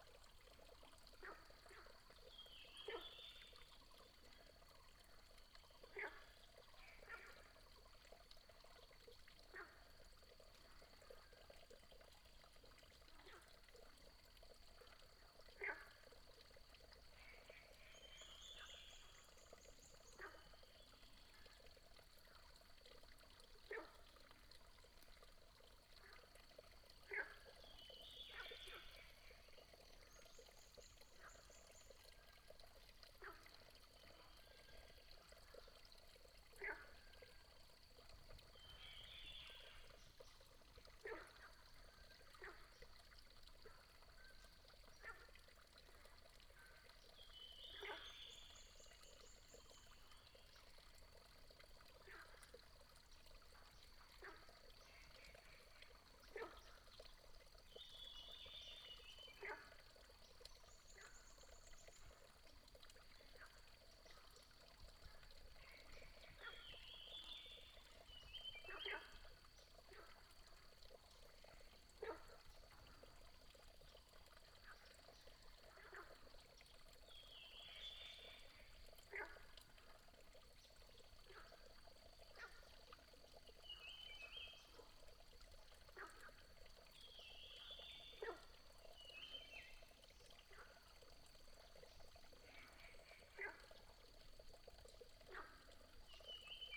三角崙, 魚池鄉五城村, Nantou County - Next to water
Frogs chirping, Bird sounds, Small water
Puli Township, 華龍巷164號, 20 April 2016, 06:17